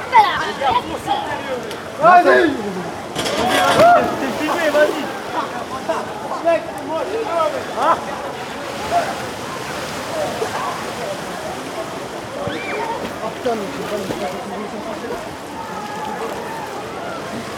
Bains de la motta, Fribourg - Swimming Pool during summer in Switzerland (Fribourg, Bains de la Motta)
Outside swimming pool in Switzerland during summer, voices, water sounds, splash and people swimming.
Recorded by an ORTF setup Schoeps CCM4 x 2
On Sound Devices 633
Recorded on 27th of June 2018
GPS: 46,80236244801847 / 7,159108892044742
27 June 2018